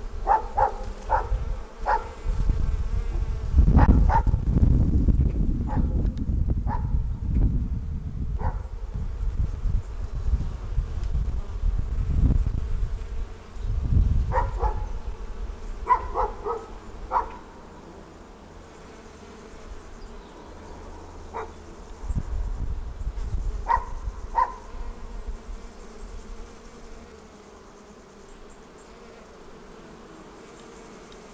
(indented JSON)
{
  "title": "Pirovac, Makirina Hill, Kroatien - Bees on Ivy",
  "date": "2013-10-13 13:45:00",
  "description": "Walking on Makirina hill. On a stone wall covered with blooming ivy a swarm of bees gathered. In the background some birds, crickets and a barking dog.",
  "latitude": "43.81",
  "longitude": "15.68",
  "altitude": "22",
  "timezone": "Europe/Zagreb"
}